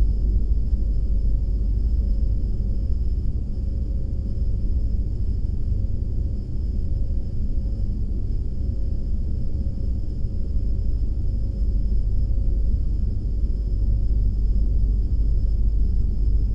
ferry Stockholm-Helsinki, contact
2nd deck of the ferry, a cabin. 4 channels recording: convebtional and contact mics
Åland Islands, October 2017